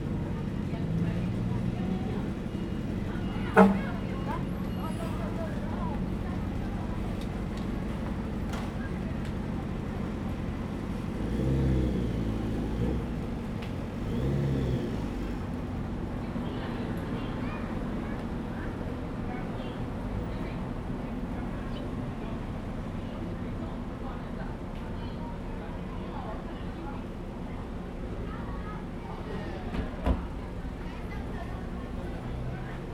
Hualien Station, Taiwan - Outside the station
Outside the station, Traffic Sound, Tourists
Zoom H2n MS+XY
29 August 2014, 10:47